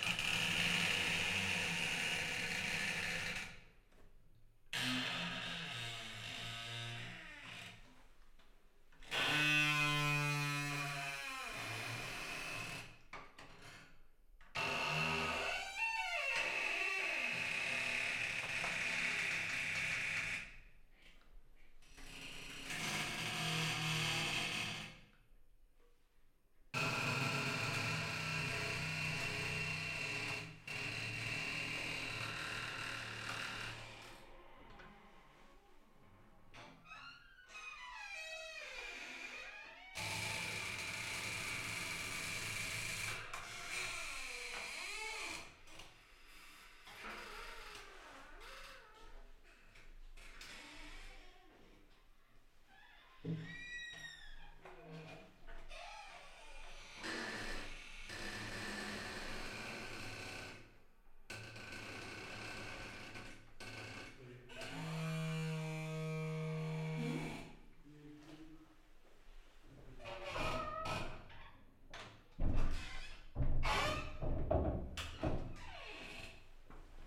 Inside Castelo de Vide Sinagog museum, creeking doors of a sculpture. Recorded with a AT4025 into a SD mixpre6.